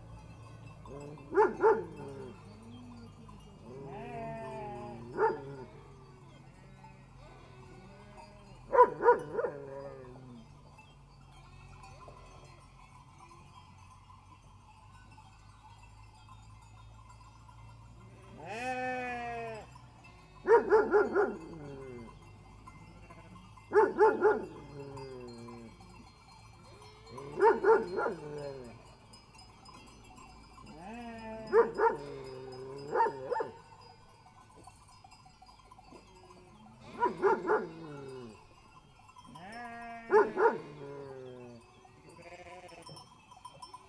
Epar.Od. Chanion - Sougias, Kandanos Selinos 730 09, Greece - sheep bells and dogs
just before evening, the dogs barks mix with the sheep's bells and baa's in this rural beautiful mountain side. (44,100 16 bit Roland R-05 stereo rec)